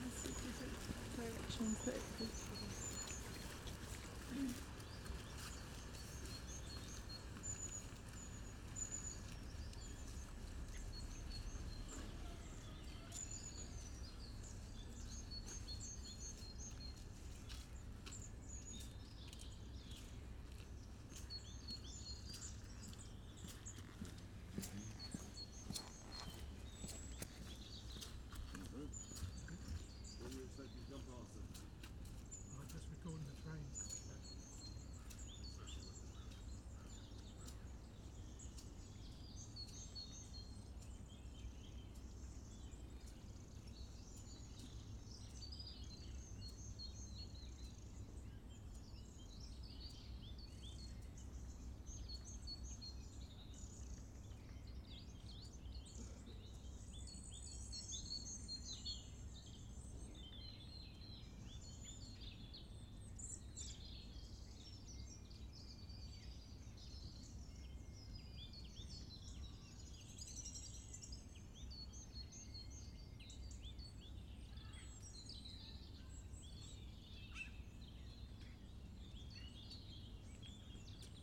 {"title": "Southcote Junction Reading UK - Trains, people and birds.", "date": "2021-01-15 11:23:00", "description": "I set the mics on a path running beside the railway track just outside Reading. The conditions were good in that there was no wind and there were trains and people, and birds. Pluggies AB with foam add-ons into an old favourite Tascam.", "latitude": "51.44", "longitude": "-1.00", "altitude": "48", "timezone": "Europe/London"}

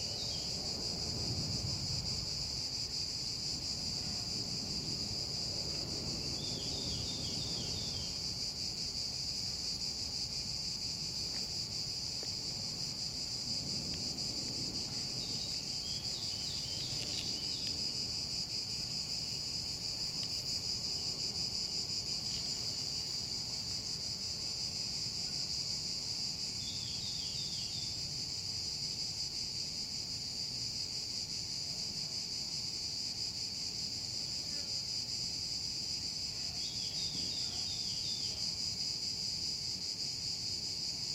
{"title": "Monsanto Forest Park, Lisboa, Portugal - #WLD2016 Monsanto Soundwalk listening post 2", "date": "2016-07-16 11:00:00", "description": "#WLD2016\nsoundnotes: Sound of human activity more distant - \"world slipping away\", soundscape more varied, dogs bark in small farm, wind picks up made audible in re-forested area, distant human activity of hammer-like sound in marked Military area - once a fort, now a prison, Motacilla cinerea call, Cistocola juncidis call, reforested old quarries have an effect on the sound", "latitude": "38.73", "longitude": "-9.19", "altitude": "192", "timezone": "Europe/Lisbon"}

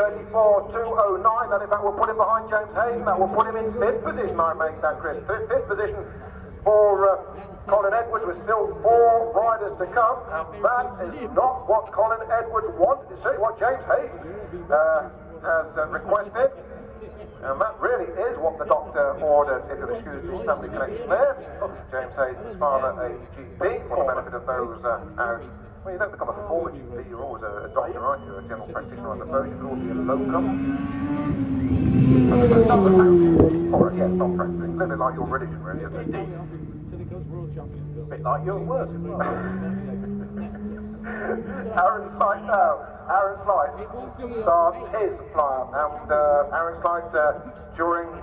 {"title": "Unnamed Road, Derby, UK - WSB 1999 ... Superbikes ... Superpole ... (contd) ...", "date": "1999-05-01 16:30:00", "description": "WSB 1999 ... Superbikes ... Superpole ... (contd) ... one point stereo to minidisk ...", "latitude": "52.83", "longitude": "-1.37", "altitude": "97", "timezone": "Europe/London"}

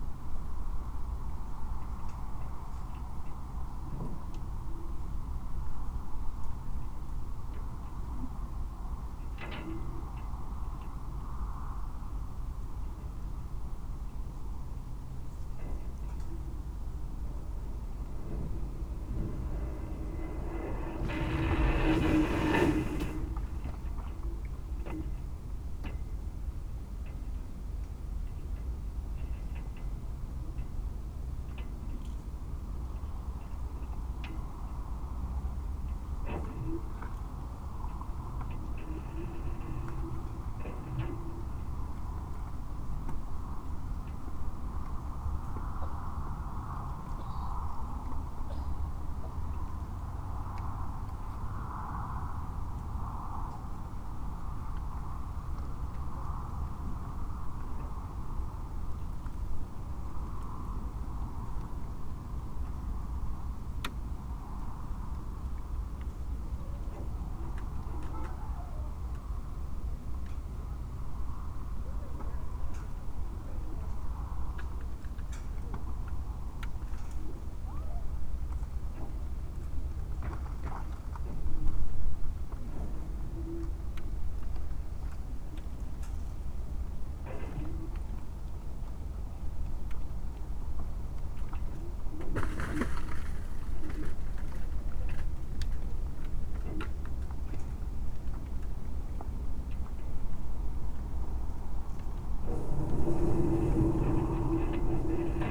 {"title": "얼음에 갇힌 부두 pier stuck in the ice", "date": "2021-01-08 19:00:00", "description": "This winter the entire surface of Chuncheon lake froze over substantially for several weeks.", "latitude": "37.87", "longitude": "127.70", "altitude": "73", "timezone": "Asia/Seoul"}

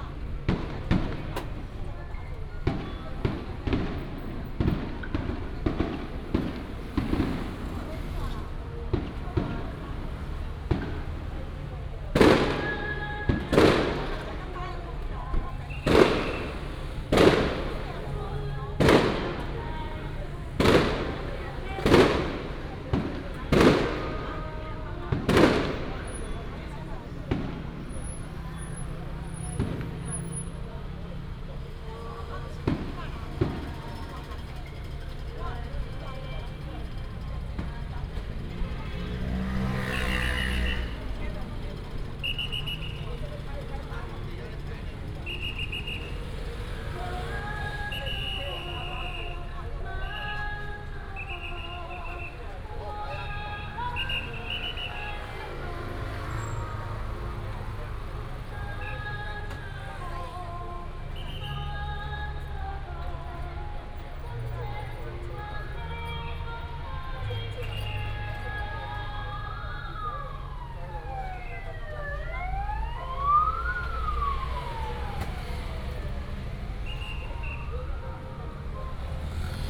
{"title": "Ln., Sec., Linsen Rd., Huwei Township - At the intersection", "date": "2017-03-03 14:18:00", "description": "Fireworks and firecrackers, Traffic sound, Baishatun Matsu Pilgrimage Procession", "latitude": "23.70", "longitude": "120.42", "altitude": "28", "timezone": "Asia/Taipei"}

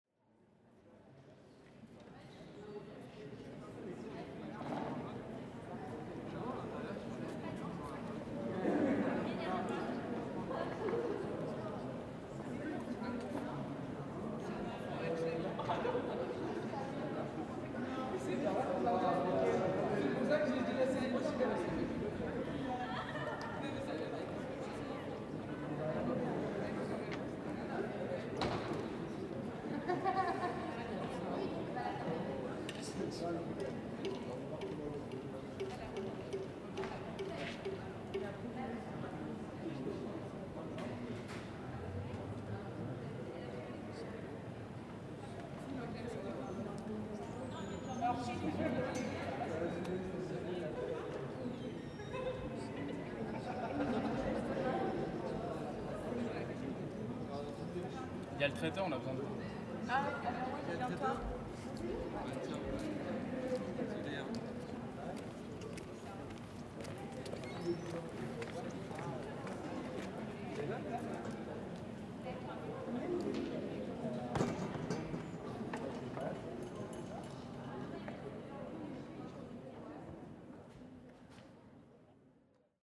{"title": "Université Diderot Paris, rue de Paradis, Paris, France - University Paris IV yard .[Paris]", "date": "2014-04-10 19:03:00", "description": "Université Paris Sorbonne-Paris IV Centre Malesherbes.\ndes voix rebondissent dans la grande cour carré de l'universitée.\nvoices echoing in the yard of the university.", "latitude": "48.88", "longitude": "2.31", "altitude": "54", "timezone": "Europe/Paris"}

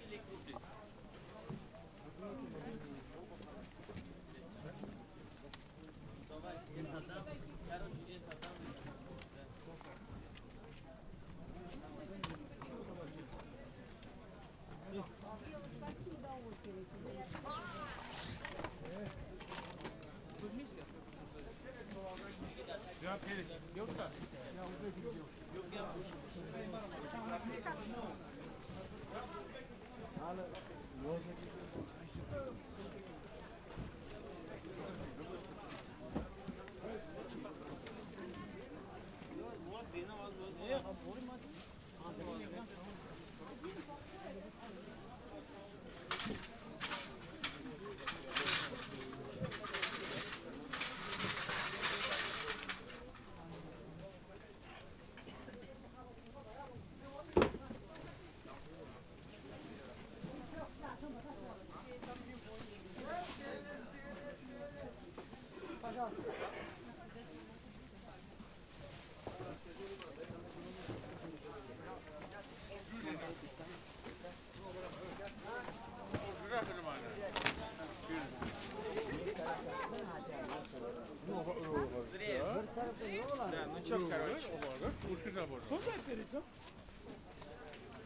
{"title": "Sennoy market, Saint-Petersburg, Russia - Sennoy market", "date": "2015-03-29 15:59:00", "description": "SPb Sound Map project\nRecording from SPb Sound Museum collection", "latitude": "59.93", "longitude": "30.32", "altitude": "15", "timezone": "Europe/Moscow"}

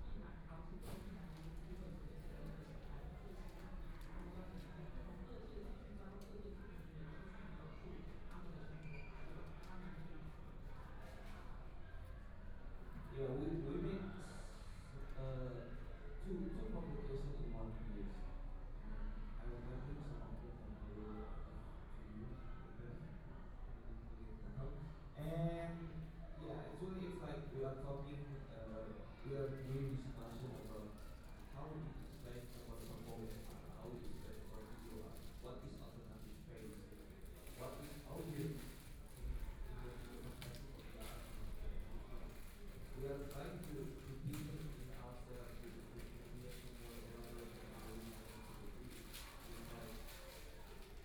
{"title": "–CHUNG SHAN CREATIVE HUB, Taipei - In the lobby", "date": "2014-02-08 15:16:00", "description": "Sound of the Art Forum's activities, Sound indoor restaurant, Binaural recordings, Zoom H4n+ Soundman OKM II", "latitude": "25.06", "longitude": "121.52", "timezone": "Asia/Taipei"}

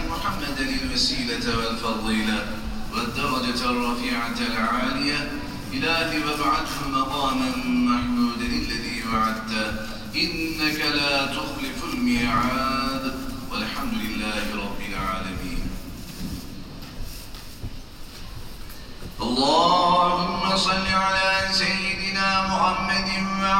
{"title": "Istanbul, Eyüp - Eyüp Sultan Camii - Believers entering Eyüp Sultan Camii", "date": "2009-08-17 17:00:00", "description": "Shoes in plactic bags are placed in shelves while rustling.", "latitude": "41.05", "longitude": "28.93", "altitude": "7", "timezone": "Europe/Berlin"}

